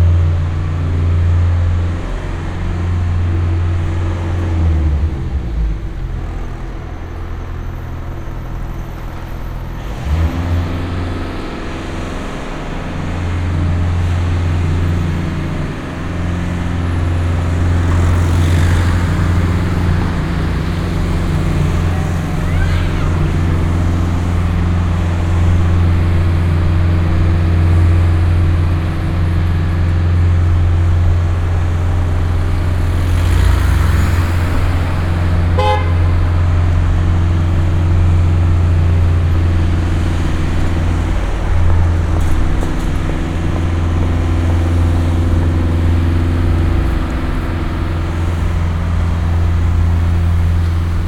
Brussels, Rue de la Source
workers on a facade.
Saint-Gilles, Belgium, 9 December 2011, 09:56